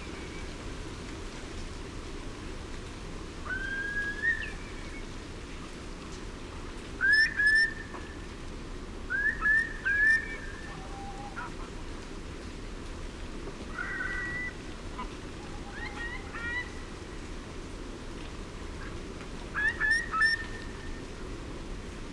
Isle of Mull, UK - wind ... rain ... curlews ... soundscape ...
Wind ... rain ... curlews ... soundscape ... Dervaig lochan ... parabolic on tripod ... bird calls from ... greylag goose ... mallard ... snipe ... greenshank ... redshank ... grey heron ... tawny owl ...